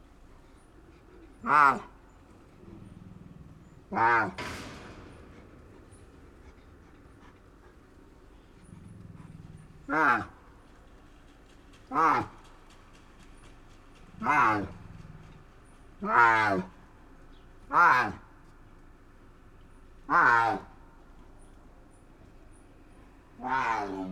La tigresa Rita se muestra algo celosa cuando alguien visita a su vecino Tipsy, el león, e intenta llamar la atención.